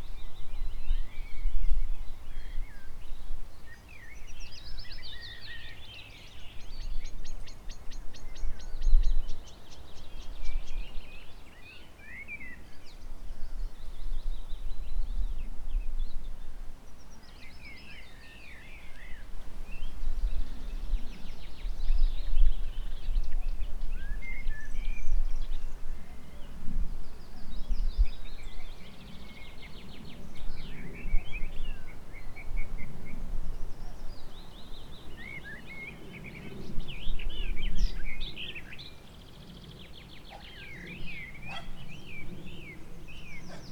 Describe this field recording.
Lake Tjeuke is the biggest lake in this province Fryslan. You can hear many birds, a passing airplane and (shortly) my dog Lola.